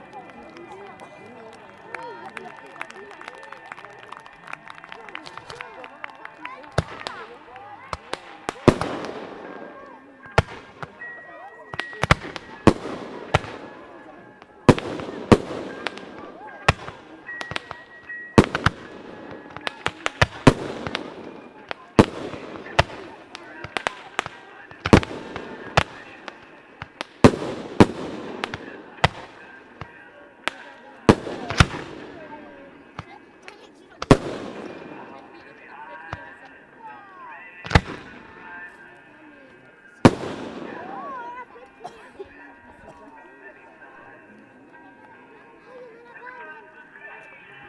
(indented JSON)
{
  "title": "Rte de L’Izoard, Arvieux, France - Arvieux en Queyras - Feu d'artifice du 14 juillet",
  "date": "2001-07-14 22:30:00",
  "description": "Arvieux en Queyras\nFeu d'artifice du 14 juillet\nAmbiance",
  "latitude": "44.77",
  "longitude": "6.74",
  "altitude": "1581",
  "timezone": "Europe/Paris"
}